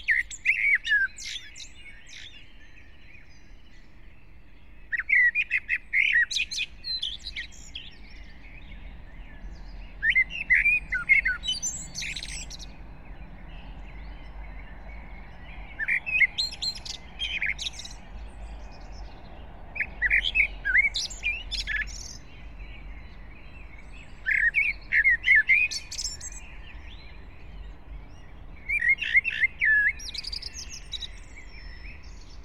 05:00 Brno, Lužánky - early spring morning, park ambience
(remote microphone: AOM5024HDR | RasPi2 /w IQAudio Codec+)